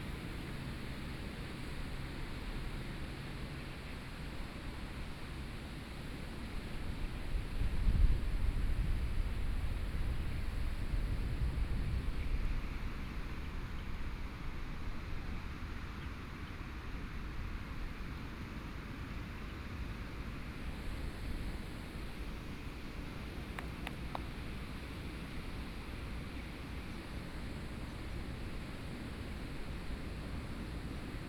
頭城鎮大坑里, Yilan County - Streams to the sea
Streams to the sea, Sound of the waves
Sony PCM D50+ Soundman OKM II